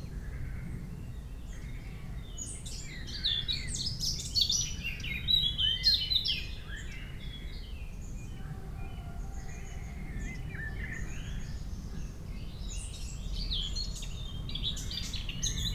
Lovagny, France - Eurasian Blackcap
An eurasian blackcap, so lovely bird, singing loudly in a path of the small village of Lovagny.